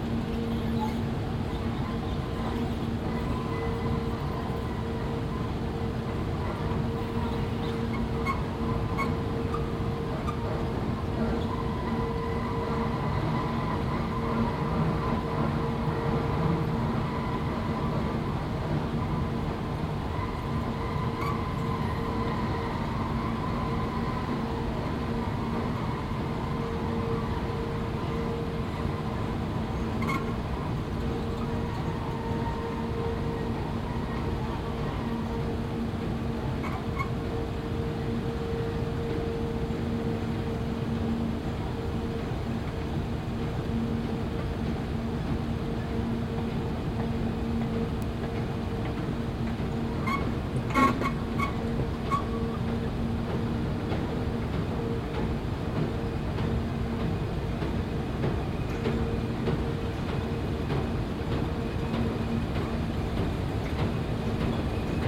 Steenokkerzeel, Belgien - Anthrophonies: Brussels Airport
On a fact-finding mission to Ghana in February, i made an overnight transit at Brussels International Airport and documented Anthrophony of the space.
Please listen with headphones for subtle details in the sound. Thank you.
Date: 15.02.2022.
Recording format: Binaural.
Recording gear: Soundman OKM II into ZOOM F4.